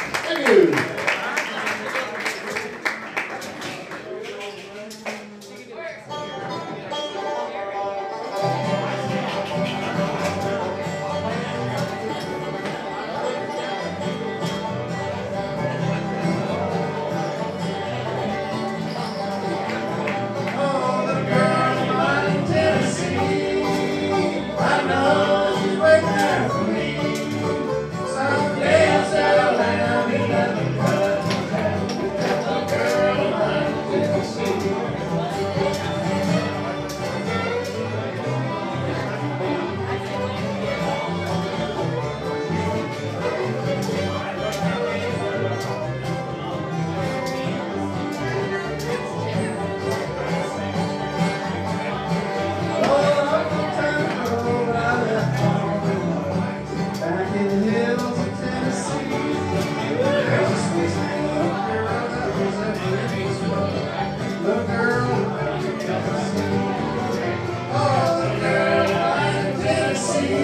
The Albatros pub - Berkeley + live country music
The Albatros pub with live country music
CA, USA, 18 November 2010, 18:12